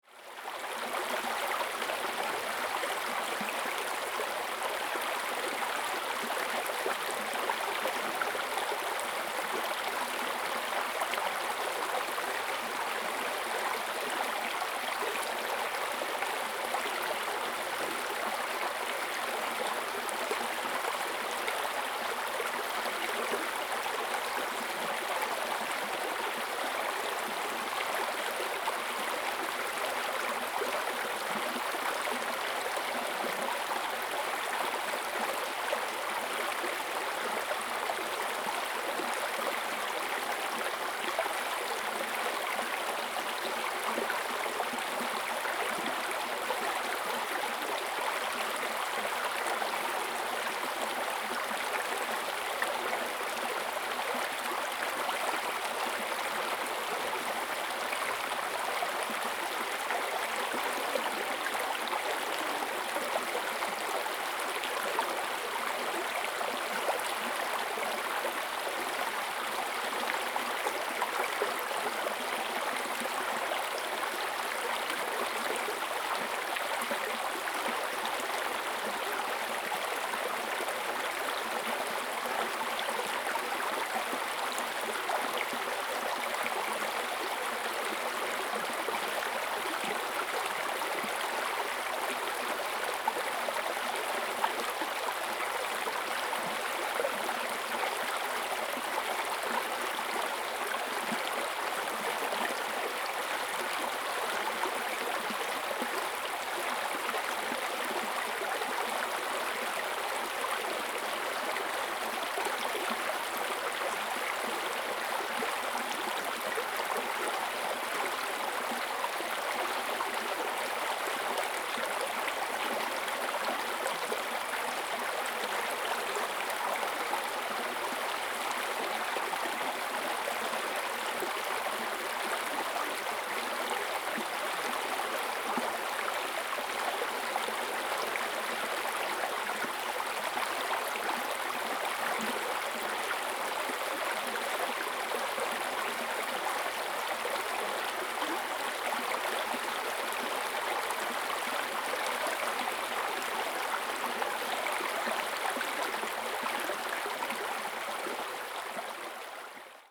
種瓜坑溪, 埔里鎮成功里 - Flow
Flow, The upper reaches of the river
Zoom H2n MS+XY